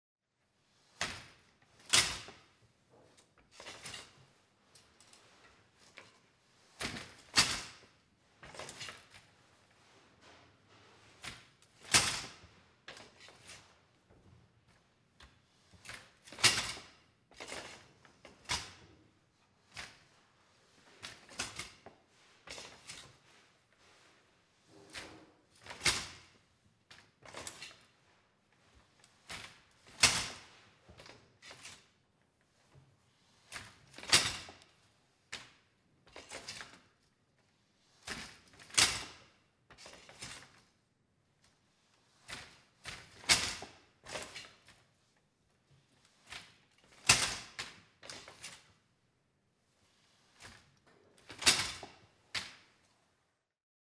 Sedbergh, Cumbria, UK - Looms in Farfield Mill
Farfield Mill has an attic full of old looms which local artisans use. This is the sound of one of the looms being operated. You can hear the lovely acoustics of the old mill building.